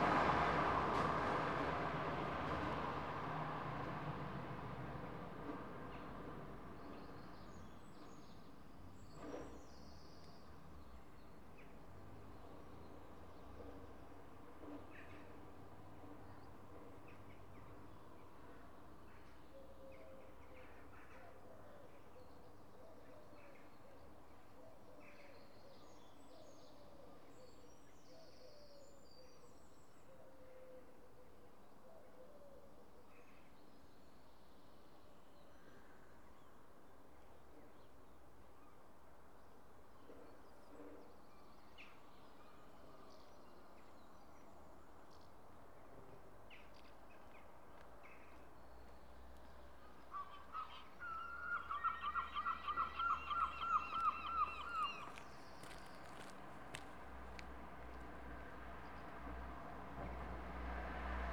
Sitting on the bench by the bridge on London Road, Dorchester, at about 6.25 am.